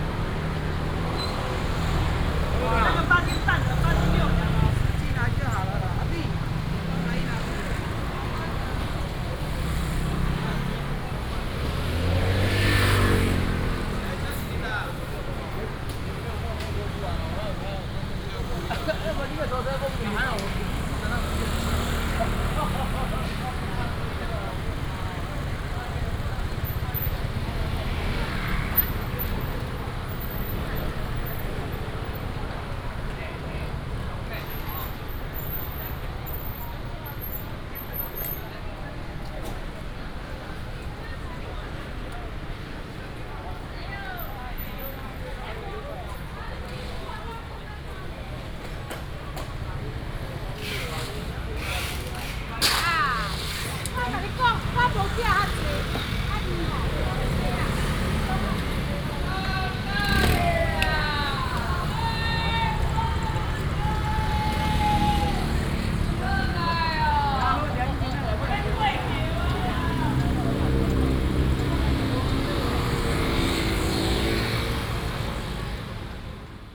Zhongzheng 3rd St., Taoyuan Dist. - Fruit and vegetable wholesale market area
walking in the Fruit and vegetable wholesale market area, traffic sound
27 June 2017, ~06:00